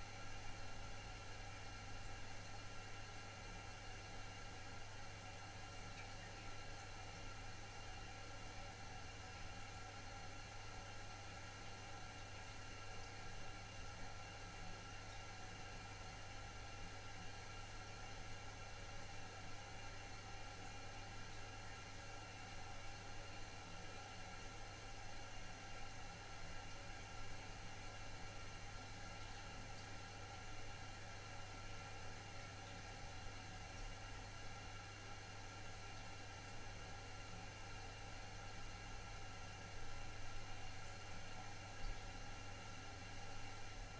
The leaky tap in the old bathroom, Reading, UK - Leaky old tap
The now extinct sound of the leaky tap in our old bathroom. Before the recent re-fit, our bathroom tap was constantly pouring water away. We had to secure a flannel around it with a rubber band, to channel the heavy leak into the bath and to stop water from going back into the faucet and leaking down through the bath into the electric system of the lights in the kitchen below, thus tripping the fuse-box! So we had constantly this sound. The long, slow, eternal hiss of the bathroom tap leaking. It's stopped now and we have a fancy new bathroom, but it has changed the way the house sounds to remove the bath and get rid of this leaky faucet which was a sonic feature of daily life for some months round here.
7 January 2014, 11:35